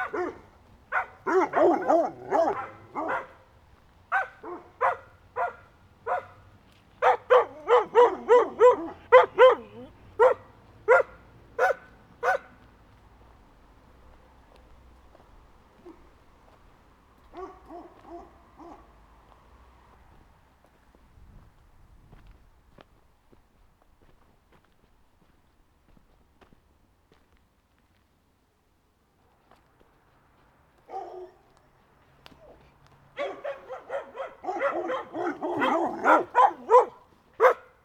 Parking the car, walking a small path and atrocious dogs barking.
Court-St.-Étienne, Belgium, 23 September 2015